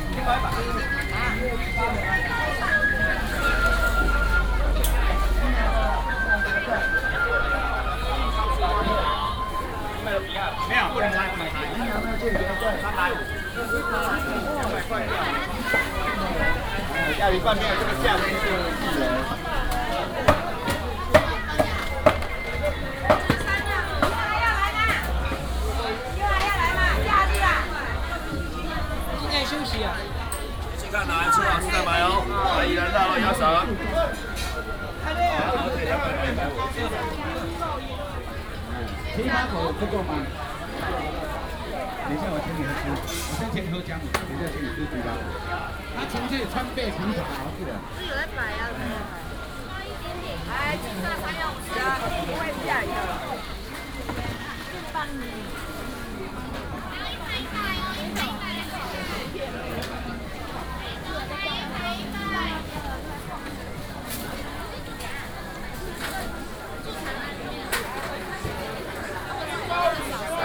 Minsheng St., Hukou Township, Hsinchu County - Walking through the traditional market
All kinds of vendors selling voice, Walking through the traditional market, Garbage collection car